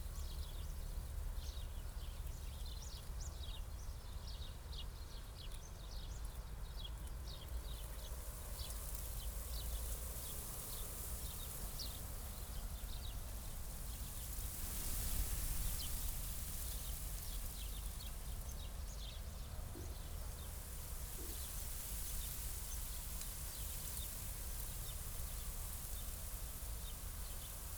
Triq It-Torri, near Marsaxlokk, Malta - wind in grainfield
light wind in a grainfield, between Zejtun and Marsaxlokk
(SD702 DPA4060)
3 April 2017, 10:50